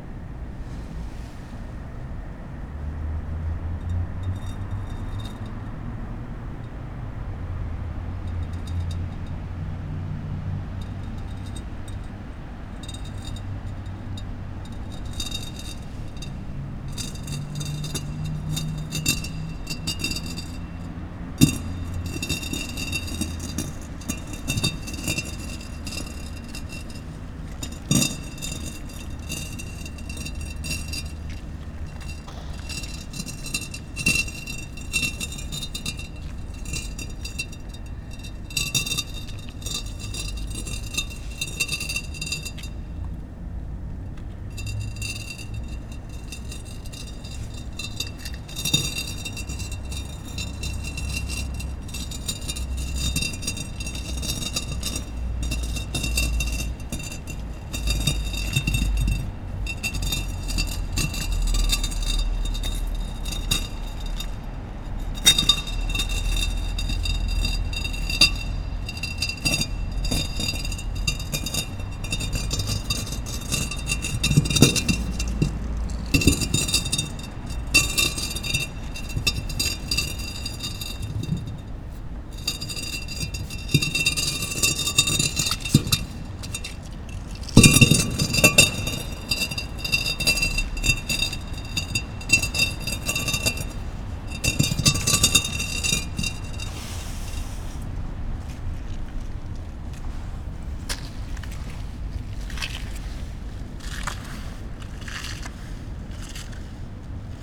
Siilotie, Oulu, Finland - Walking inside a defunct workshop
Walking inside a defunct workshop at nighttime. Stepping on various objects and moving them. Cars moving by on the nearby road. Zoom H5 and LOM Uši Pro microphones.
Pohjois-Pohjanmaa, Manner-Suomi, Suomi, 1 August 2020